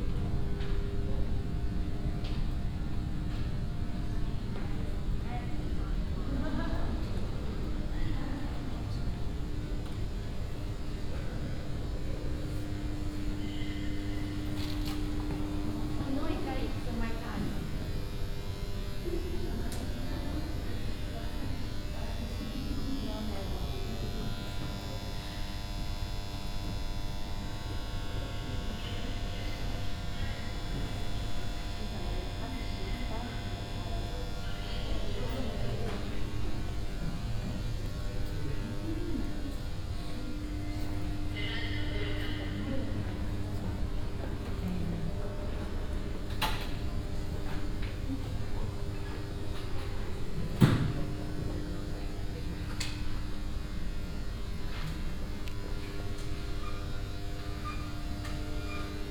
Madrid, CaixaForum - lights over ticket office
(binaural) walking around one of the floors. the space is filled with sound of buzzing fluorescent lamps. very dense grid of imposing buzz. there is no place on the floor where you can escape it. wonder if the employees notice it and if it bothers them.